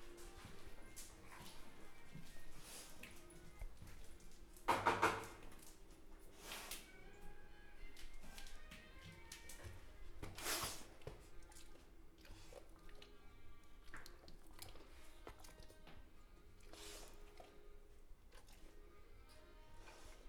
ESAD.CR, Caldas da Rainha - Walk: ESAD.CR---Casa Bernardo
Walk from ESAD.CR to Casa Bernardo
Recorded w/ Zoom H4n.